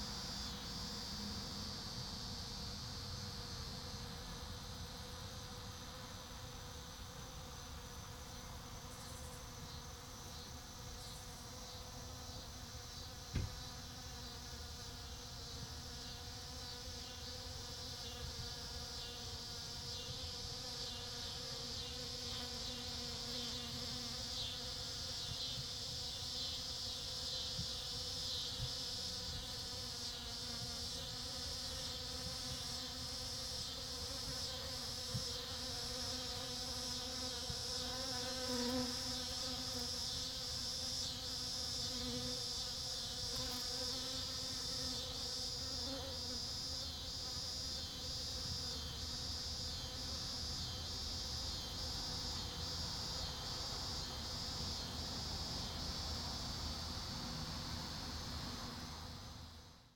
A post for World Honey Bee Day! Honey bees swarming a flowering shrub. Cicadas. Passing traffic.